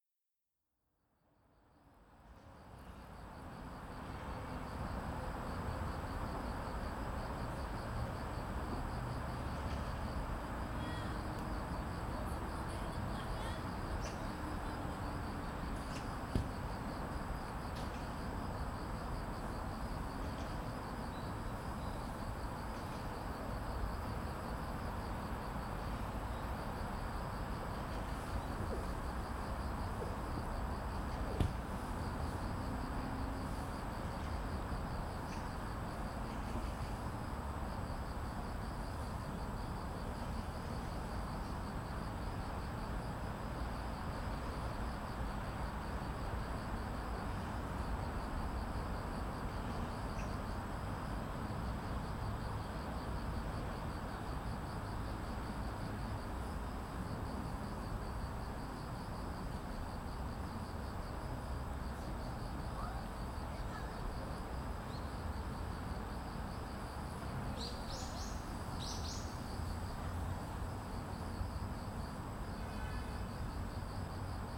대한민국 서울특별시 서초구 반포본동 1107 - Banpo Jugong Apartment, Cricket, Street
Banpo Jugong Apartment, Cricket, Street
반포주공1단지, 저녁, 풀벌레, 자동차
8 September, 17:59